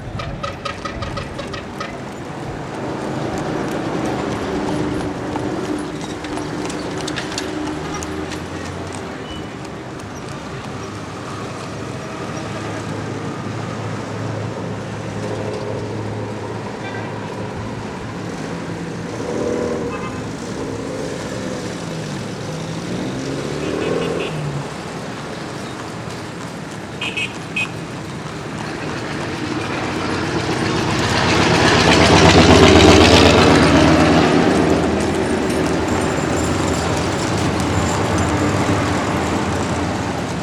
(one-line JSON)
{"title": "Santiago de Cuba, calle Alameda y Trinidad", "date": "2003-12-10 12:06:00", "latitude": "20.03", "longitude": "-75.83", "altitude": "9", "timezone": "America/Havana"}